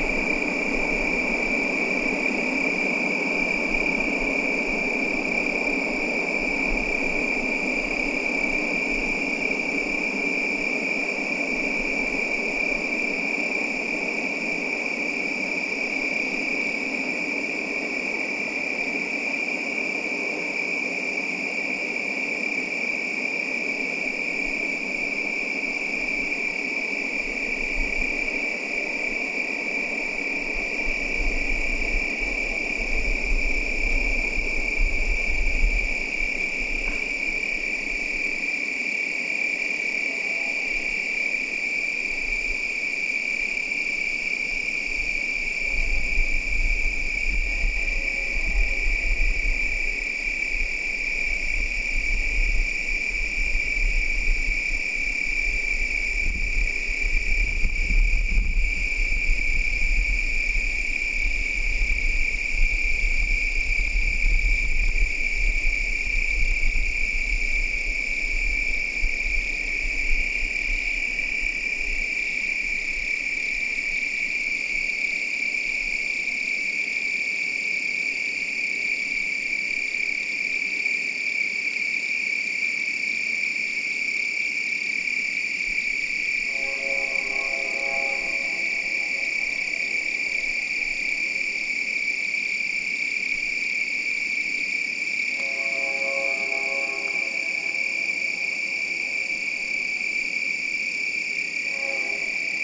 Nelson Dewey State Park - Evening chirps and trains
recorded at cart-in campsite D on my Olympus LS-10S